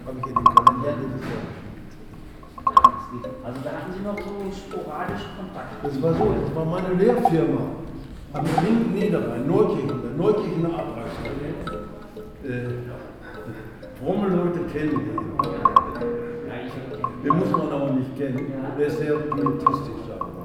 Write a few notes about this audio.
We're in the large hall of the city library/ VHS building. It’s probably been the last event here before the second lock down begins on Monday… For the past 90 minutes we listened to Hermann Schulz's storytelling, a first reading from his manuscript for a yet to be published book. Joseph Mahame had accompanied Schulz’s journey with his musical stories and sounds. While Herrmann Schulz is still talking with interested listeners, a spontaneous jam session unfolds… find the recordings of the event archived here: